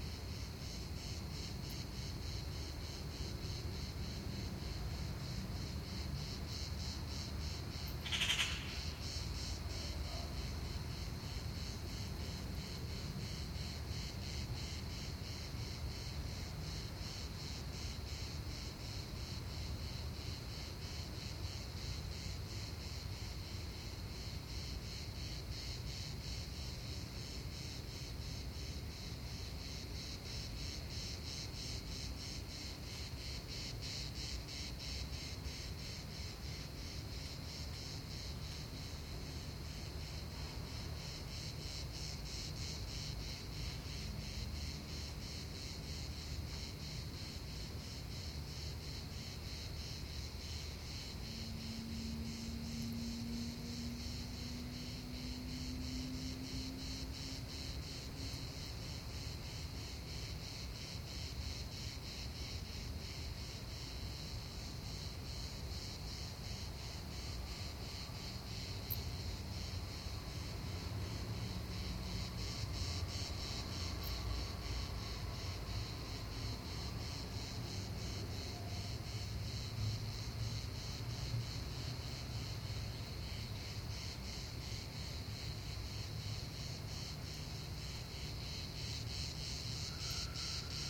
Mnt Gilloux, Marseille, France - Marseille - Petit matin au Roucas-Blanc
Marseille
Petit matin au Roucas blanc - ambiance estivale